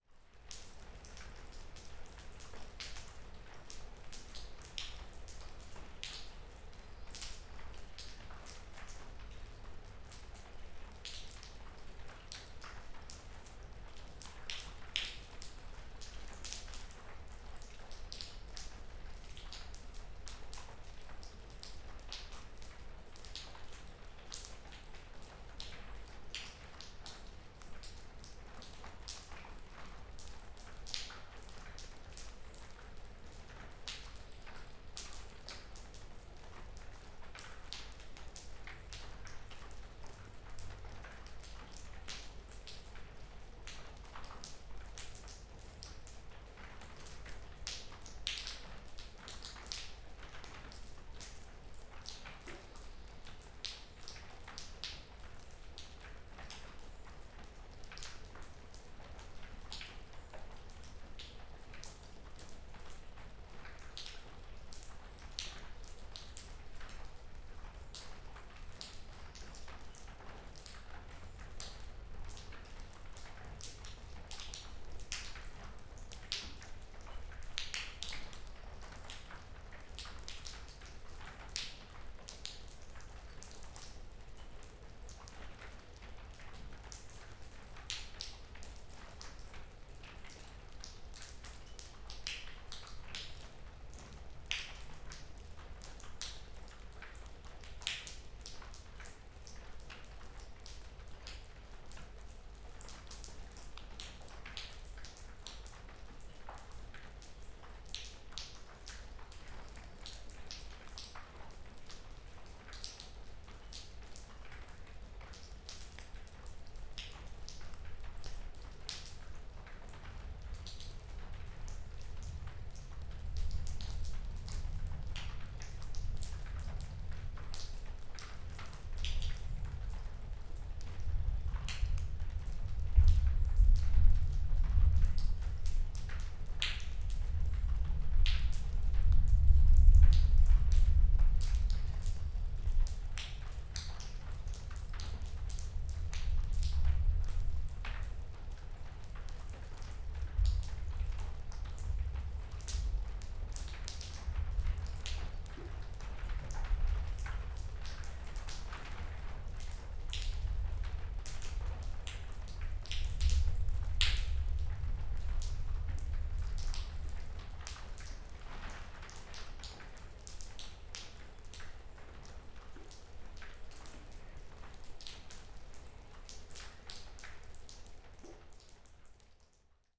ex Soviet military base, Vogelsang - rain drops inside garage
garages near magazin bulding, rain dripping from the ceiling
(SD702, MKH8020)